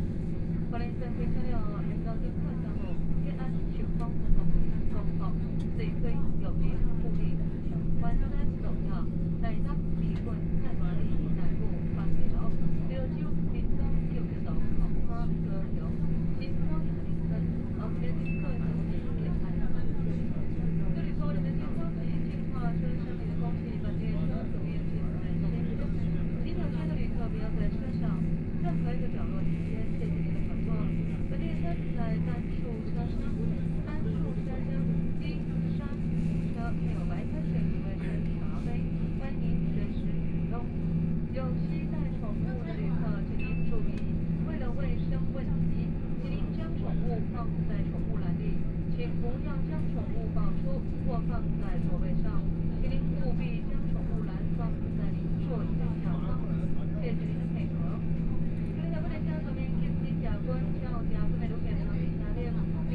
Ji'an Township, Hualien County - Noise inside the train
Noise inside the train, Train voice message broadcasting, Dialogue between tourists, Mobile voice, Binaural recordings, Zoom H4n+ Soundman OKM II
Hualien County, Taiwan, 15 January 2014